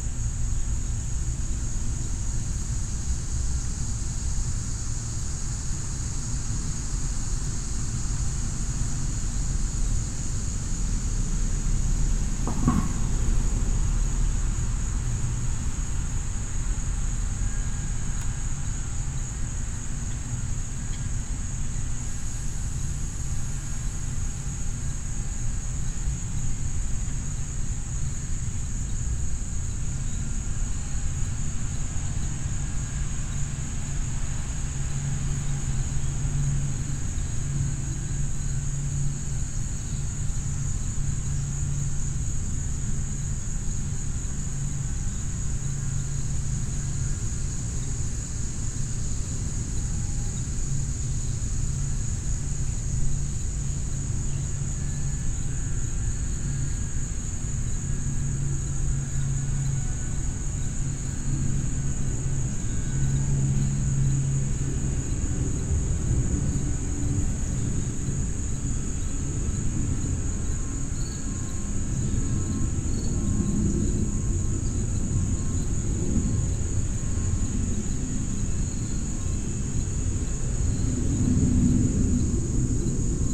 Paulding Ave, Northvale, NJ, USA - Neighborhood Ambience
This is a recording of the general ambience surrounding the neighborhood, as captured from a house on Paulding Avenue. Insects are heard throughout the recording, along with the occasional car, planes passing overhead, and the droning of a leaf blower in the background.
[Tascam Dr-100mkiii w/ Primo EM-272 omni mics]
Bergen County, New Jersey, United States, 24 August